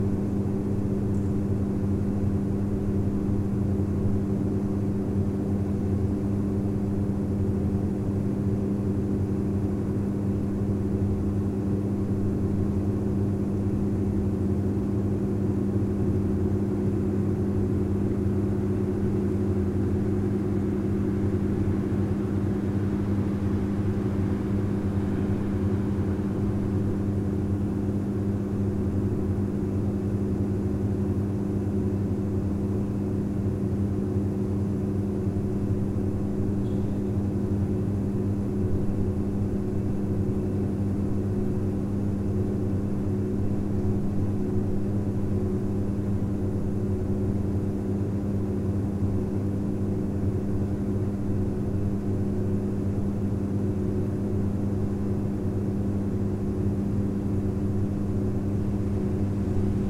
Prešernova ulica, Maribor, Slovenia - corners for one minute
one minute for this corner: Prešernova ulica 19